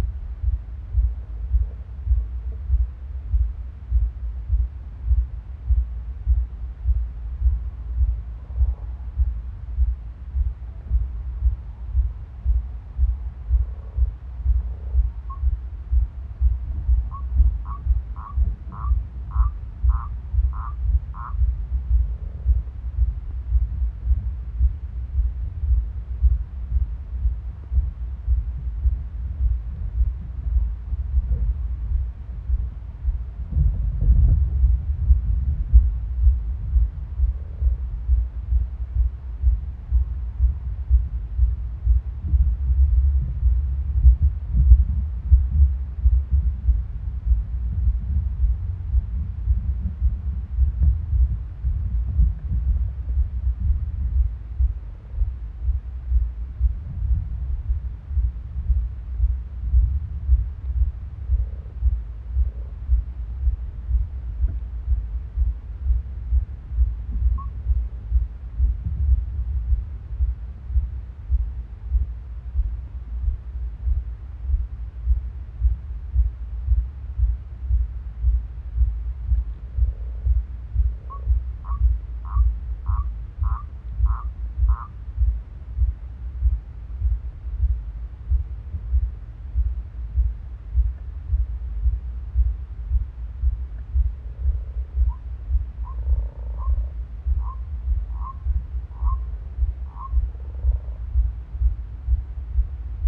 Pergola, Malvern, UK - Malvern, Worcestershire, UK
From an overnight recording using a very inexpensive contact microphone secured under the roof of my garden pergola. Directly above is a hen mallard incubating eggs. Very luckily the mic must be under her body registering the pulse. The planking is 10mm thick. Notice how quicly her heart changes pace. Strangely other sounds are picked up too. Possibly the wooden roof is acting as a diaphragm as well as a conductor. You may have to increase the volume to hear this recording well. I am hoping to record the eggs hatching later around 17th April.
England, United Kingdom